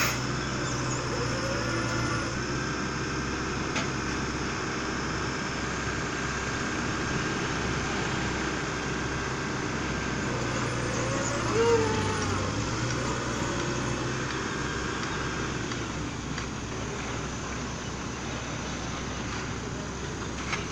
Via Fontesecco, LAquila AQ, Italia - men at work at a construction site
Men and machines at work on a road under the bridge. They're working on the public and private reconstruction after the Earthquake of 2009.
Abruzzo, Italia, 6 June 2020